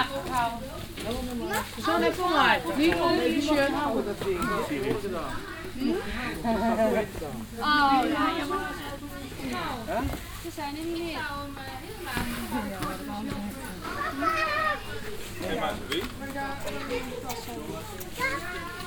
stolzembourg, old copper mine, tourist group
A group of dutch tourist exit the tunnel after a mine excursion. The sound of the plastic protection covers that they wear and their voices commenting the trip.
Stolzemburg, alte Kupfermine, Touristengruppe
Eine Gruppe von niederländischen Touristen verlässt den Tunnel nach einer Minenexkursion. Das Geräusch des Plastik-Schutzes, den sie tragen, und ihre Stimmen, die den Ausflug kommentieren.
Stolzemburg, ancienne mine de cuivre, groupe de touristes
Un groupe de touristes hollandais sort du tunnel après une excursion dans la mine. Le bruit des vêtements de protection en plastique qu’ils portent et leurs voix commentant la visite.
Project - Klangraum Our - topographic field recordings, sound objects and social ambiences
Stolzembourg, Luxembourg